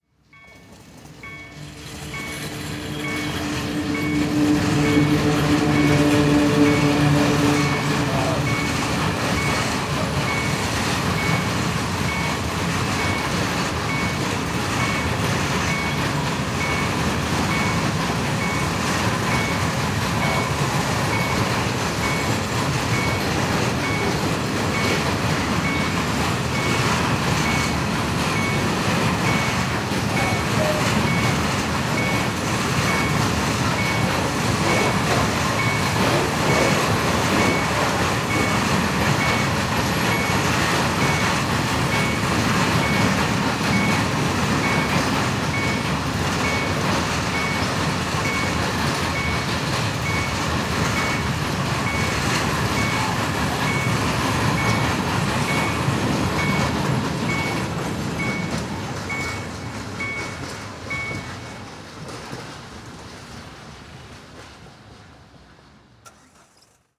encountering another cargo train. this time a longer unit. rumbling just in front of the mics. trains pass through here almost every 15 minutes or so. day and night.
Poznan, Poland, 25 May 2014